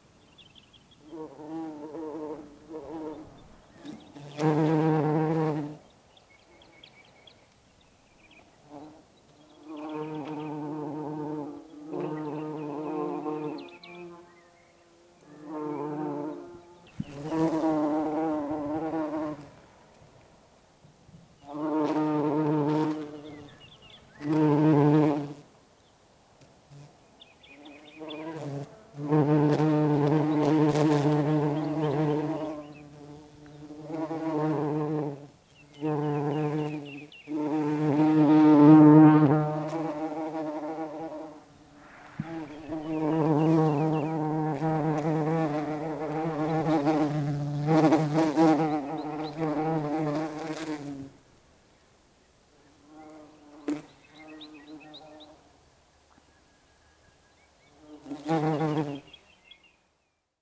Bumblebees are on the trot ! They work hard in hawthorn.
Bédouès, France, 29 April